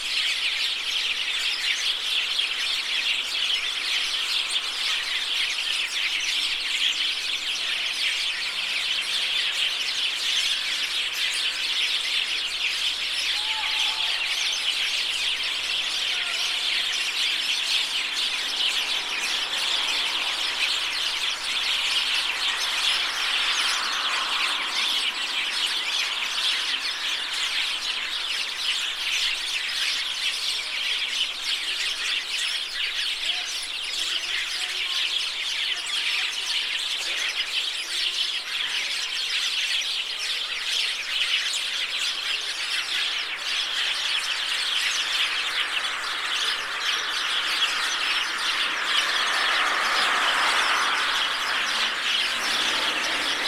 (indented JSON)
{
  "title": "CENA LIBERA/grande discussion avant l'hiver - Grande discussion avant l'hiver",
  "date": "2012-01-15 12:25:00",
  "latitude": "52.48",
  "longitude": "13.34",
  "altitude": "44",
  "timezone": "Europe/Berlin"
}